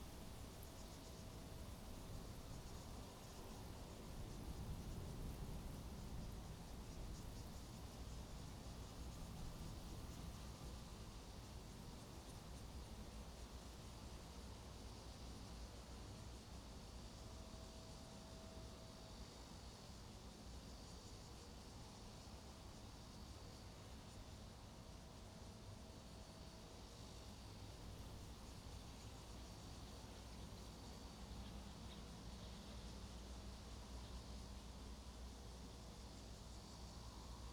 Ln., Sec., Xinguang Rd., Pingzhen Dist. - The train runs through
Next to the railroad tracks, The train runs through
Zoom H2n MS+ XY
Pingzhen District, Taoyuan City, Taiwan, 4 August